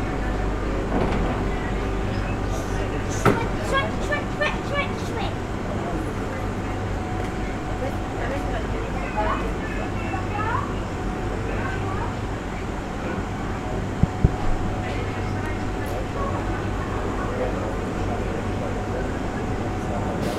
En attendant le train qui a du retard, beaucoup de monde sur le quai.